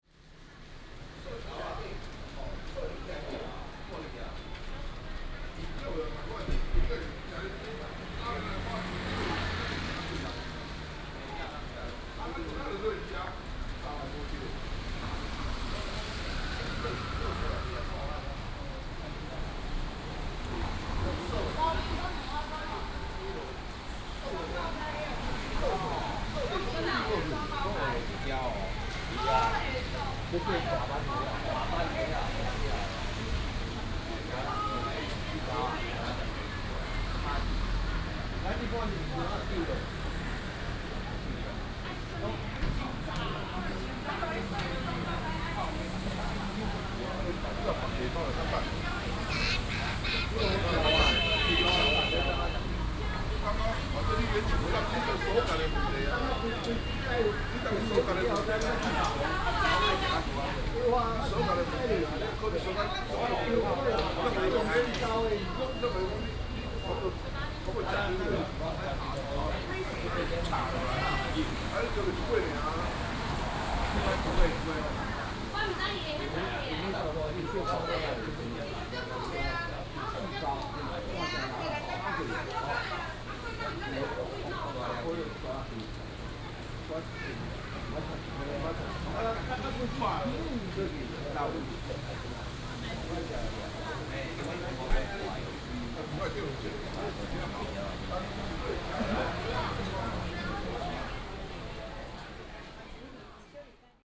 Xin 3rd Rd., Zhongzheng Dist., Keelung City - Old Quarter
Old Quarter, Breakfast shop, Rainy day, Traffic sound, Binaural recordings, Sony PCM D100+ Soundman OKM II